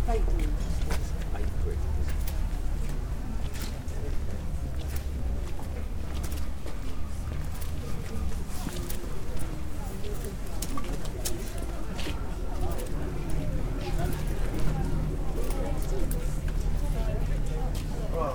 Upper Gardener Street/Kensington Market, Brighton
A Saturday afternoon walk in the North Laines, Brighton. Northwards through the street market in Upper Gardener Street before turning right and right again and heading south down Kensington Gardens (which isn’t a garden but a street of interesting shops)…During my walk down Kensington Gardens I popped into the Crane Kalman Gallery to look at a series of Rock Photographs they have on dislay
England, United Kingdom